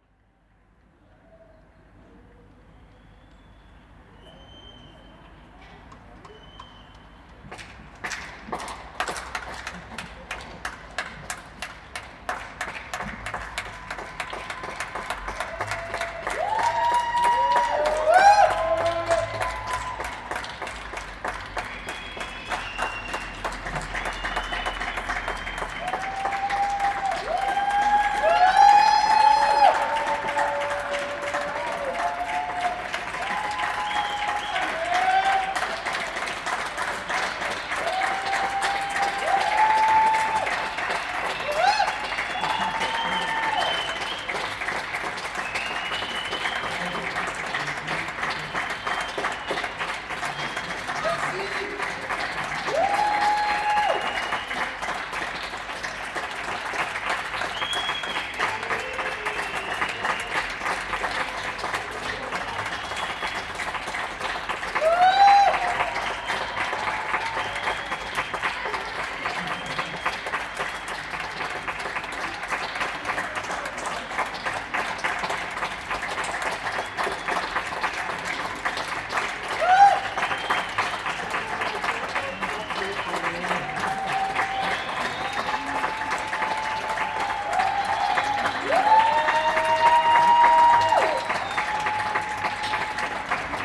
rue Bancel, Lyon, France - Applaudissement de 20h lors du Confinement 2020
Applaudissement de 20h pour encourager les soignants lors du Confinement 2020 lié au Covid-19
France métropolitaine, France, 19 March 2020